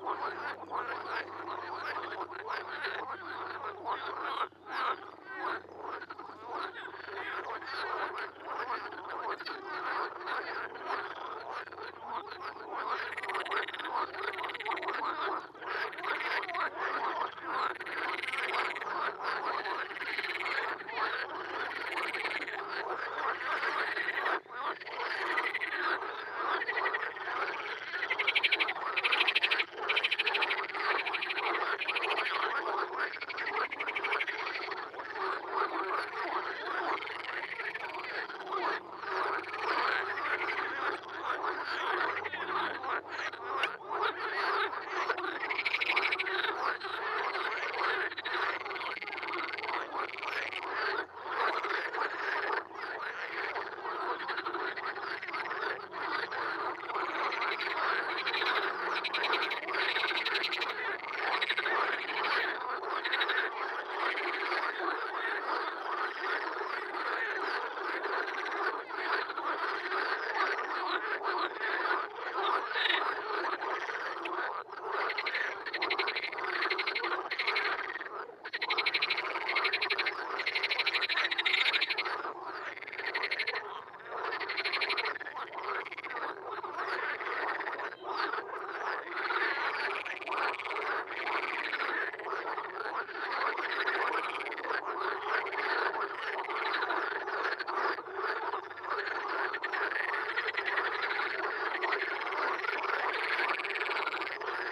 Oder, hinter dem Deich / behind the dike - Froschkonzert/ frog concert
crazy frogs at concert, little pond behind the dike
Germany, 23 May